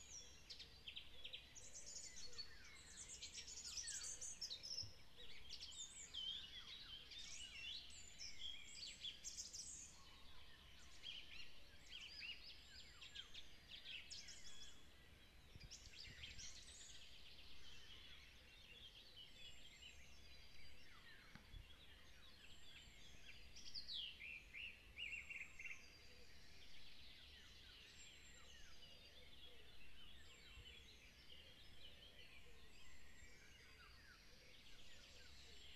Gmina Wąwolnica, Polen - excerpt of bird concert
a very multifacetted concert by birds in the early morning
Łąki, Poland